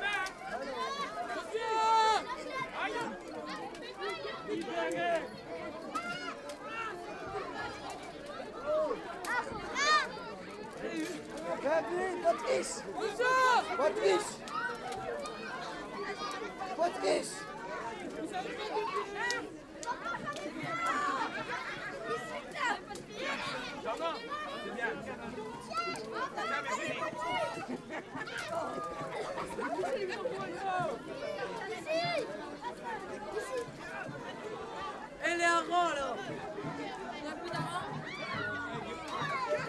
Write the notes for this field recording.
Dans le cadre du Carnaval de Dunkerque - Bourg de Mardyck (Département du Nord), Bande (défilée) de Mardyck, "Libérez les harengs !" - le défilée prend fin...